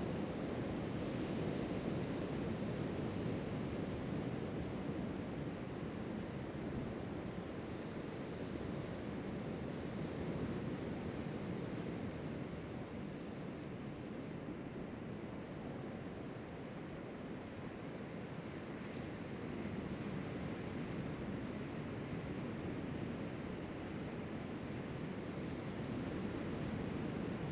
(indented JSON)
{
  "title": "Swallow Cave, Lüdao Township - sound of the waves",
  "date": "2014-10-31 08:09:00",
  "description": "Environmental sounds, sound of the waves\nZoom H2n MS +XY",
  "latitude": "22.68",
  "longitude": "121.51",
  "altitude": "19",
  "timezone": "Asia/Taipei"
}